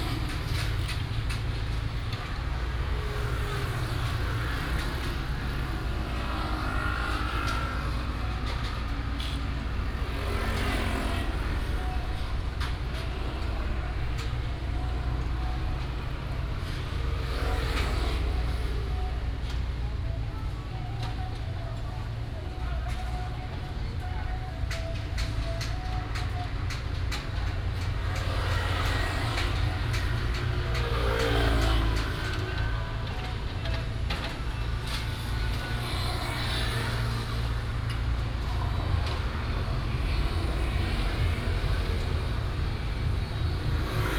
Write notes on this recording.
Site construction sound, traffic sound, Binaural recordings, Sony PCM D100+ Soundman OKM II